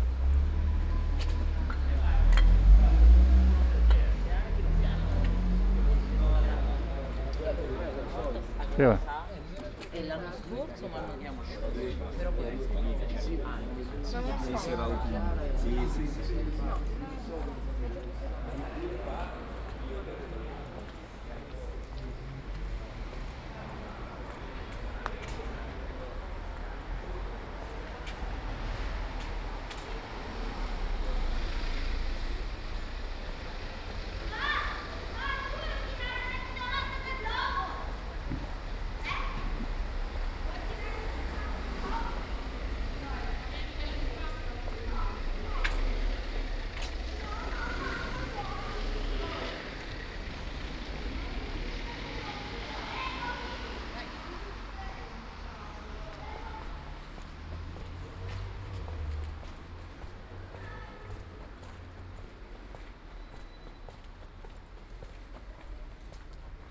Ascolto il tuo cuore, città. I listen to your heart, city. Chapter V - Supermercato serale tre mesi dopo ai tempi del COVID19 Soundwalk
"Supermercato serale tre mesi dopo ai tempi del COVID19" Soundwalk
Chapter CVI of Ascolto il tuo cuore, città. I listen to your heart, city
Saturday, June 13th 2020. Walking with shopping in San Salvario district, Turin ninety-five days after (but day forty-one of Phase II and day twenty-ight of Phase IIB and day twenty-two of Phase IIC) of emergency disposition due to the epidemic of COVID19.
Start at 8:21 p.m. end at #:00 p.m. duration of recording ##'42''
The entire path is associated with a synchronized GPS track recorded in the (kml, gpx, kmz) files downloadable here:
Torino, Piemonte, Italia, 13 June 2020